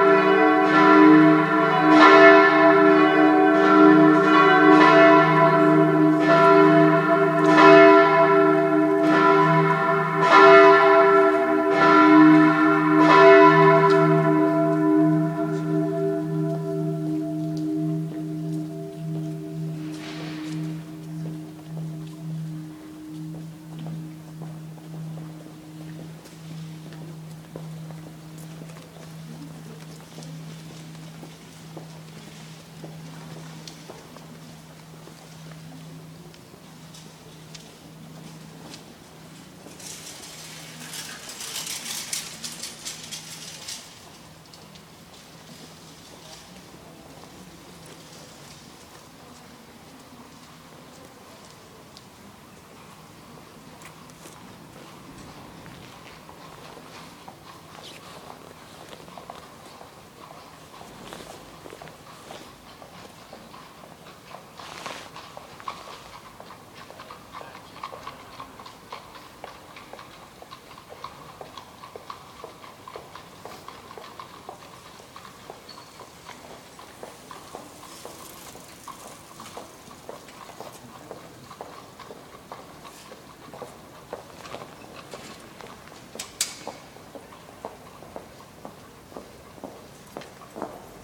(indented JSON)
{
  "title": "church bells, Salzburg, Austria - church bells",
  "date": "2012-11-13 12:19:00",
  "description": "firstly church bells from surrounding churches ring followed by the church bell of the dome",
  "latitude": "47.80",
  "longitude": "13.04",
  "altitude": "432",
  "timezone": "Europe/Vienna"
}